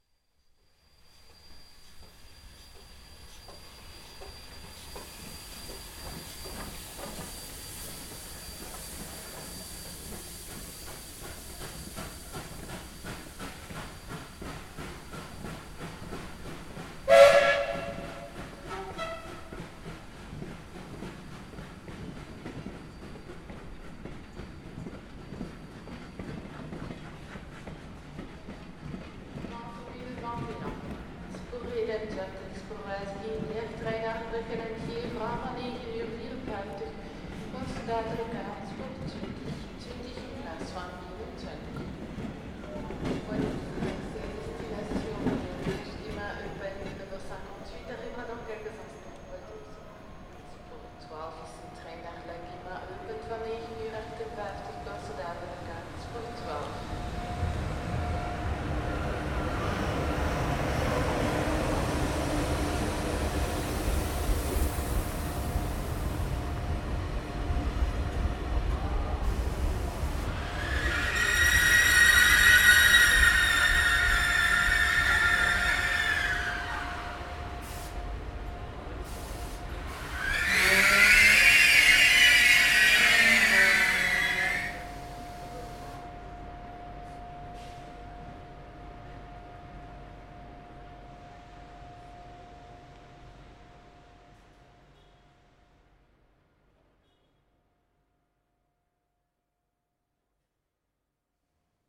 Avenue Fonsny, Sint-Gillis, Belgique - Gare Bruxelles Midi
Bruxelles
Gare Bruxelles Midi
Ambiance
Région de Bruxelles-Capitale - Brussels Hoofdstedelijk Gewest, België / Belgique / Belgien